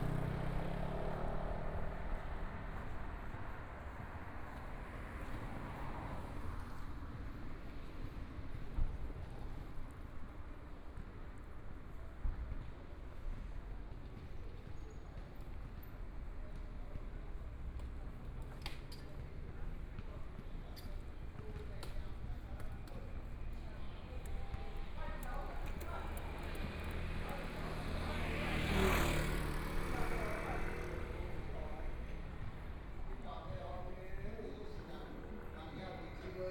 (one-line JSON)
{"title": "Dizheng Rd., Xihu Township - Walking on the street", "date": "2014-01-05 19:45:00", "description": "Walking on the street, In convenience stores, Traffic Sound, Zoom H4n+ Soundman OKM II", "latitude": "23.96", "longitude": "120.48", "altitude": "20", "timezone": "Asia/Taipei"}